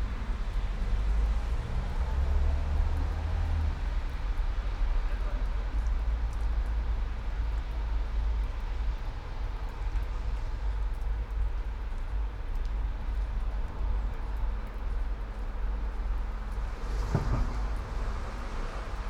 all the mornings of the ... - jan 22 2013 tue
Maribor, Slovenia